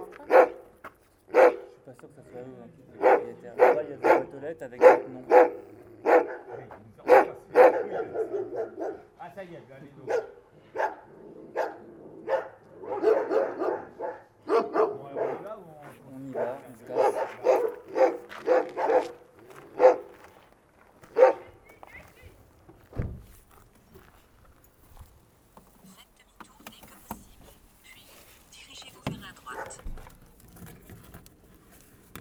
Since years, there's dogs on this place. Since years, they don't recognize me and it's always the same, they yell ! This moment is a pure well-being ;-)
Largentière, France, 25 April 2016, 14:30